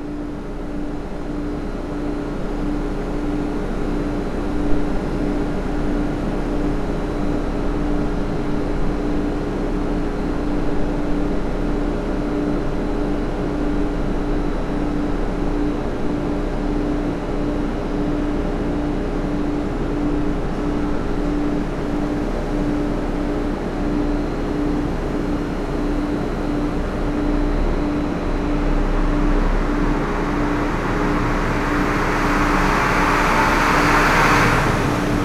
Sound in a stairwell (level, Memorial Hermann Hospital, Houston, Texas - Sound in a stairwell (level 2)
Heavy layered drone in the stairwell of this parking garage. Was going to see my dad for the first time after his surgery, and noted how the dense/intense sound harmonized with my anxiety.
Tascam DR100 MK-2 internal cardioids